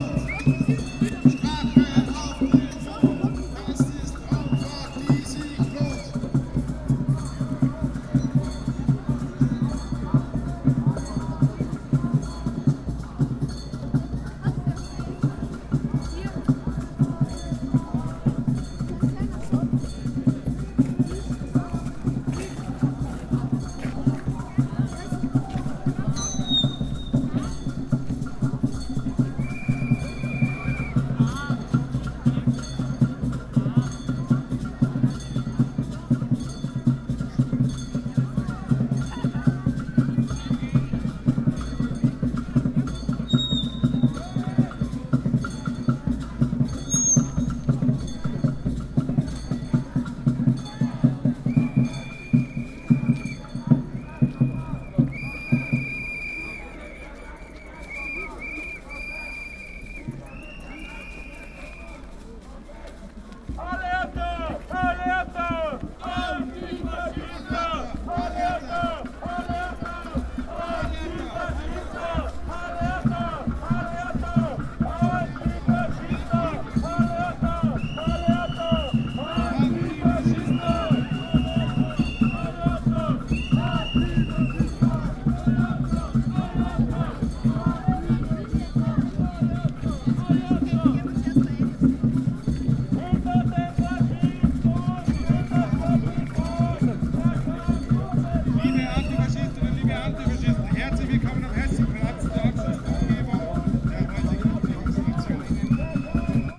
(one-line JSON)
{"title": "Hessenplatz, Linz, Österreich - antifaschistische Kundgebung gegen den burschenbundball", "date": "2015-01-10 19:30:00", "description": "antifaschistische kundgebung gegen den burschenbundball am hessenplatz", "latitude": "48.30", "longitude": "14.29", "altitude": "264", "timezone": "Europe/Vienna"}